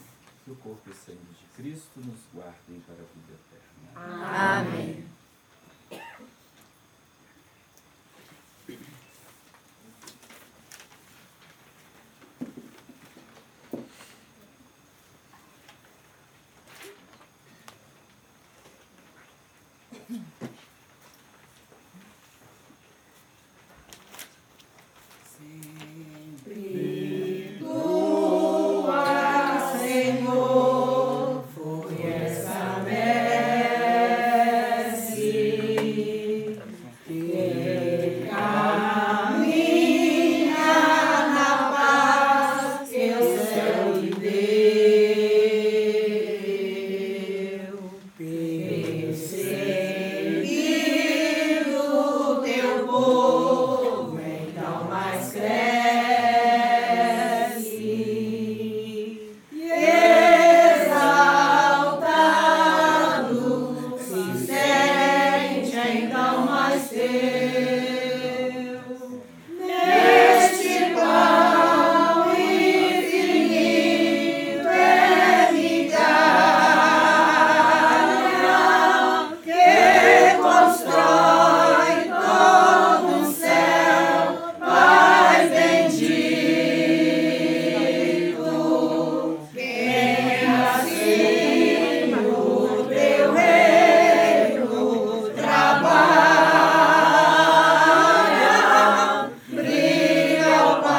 Tangara, MG, Brasil - Mass for Sao Sebastian, during the night, outisde
Mass for Sao Sebastian, outside during the night, in the countryside of Minas Gerais (Brasil). A group of 30 people (approx.) praying and singing for the celebration of Sao Sebastian during the night of 18th of January 2019 in the Tangara Community in Minas Gerais (Brasil).
Recorded by an Ambeo Smart Headset by Sennheiser
GPS: -20.1160861, -43.7318028
Rio Acima - MG, Brazil, 18 January, 20:00